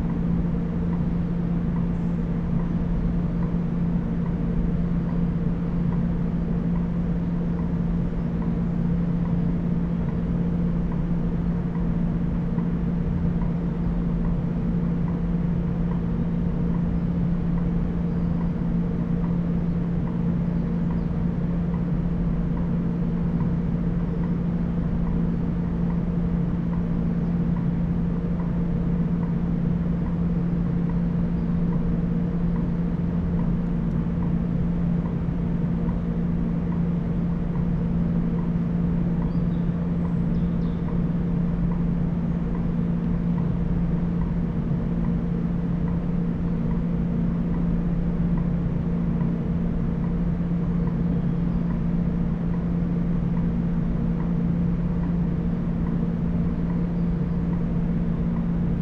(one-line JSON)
{"title": "Thielenbruch, Köln, Deutschland - station ambience", "date": "2019-03-20 18:55:00", "description": "terminal stop of tram lines 3 and 18, station hall ambience, a train is arriving\n(Sony PCM D50, Primo EM172)", "latitude": "50.98", "longitude": "7.09", "altitude": "63", "timezone": "Europe/Berlin"}